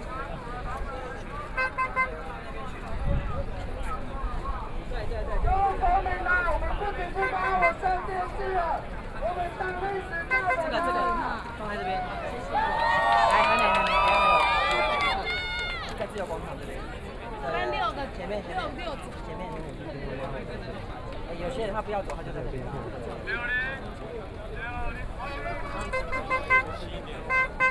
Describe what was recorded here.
Thousand Protesters gather making event, Everyone singing protest songs, Selling ice cream diner, Shouting slogans, Binaural recordings, Sony Pcm d50+ Soundman OKM II